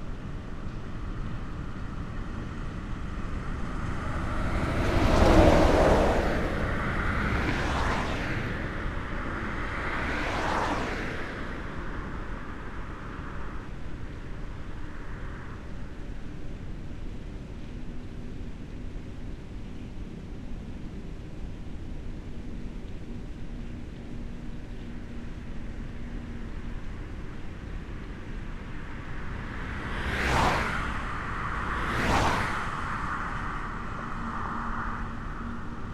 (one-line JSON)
{"title": "Soška cesta, Solkan, Slovenia - Road from Nova Gorica to Plave, near Solkan dam", "date": "2020-10-08 10:04:00", "description": "Beside main road from Nova Gorica to Plave, near Solkan dam.\nRecorded with Lom Uši Pro, Olson Wing array.", "latitude": "45.98", "longitude": "13.66", "altitude": "75", "timezone": "Europe/Ljubljana"}